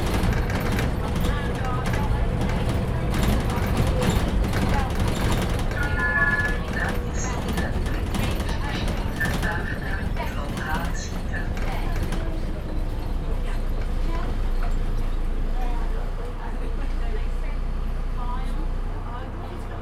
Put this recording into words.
A ride on a night bus, rattling sounds, closing door signal, next stop announcement, Recorded on Zoom H2n, 2 channel stereo mode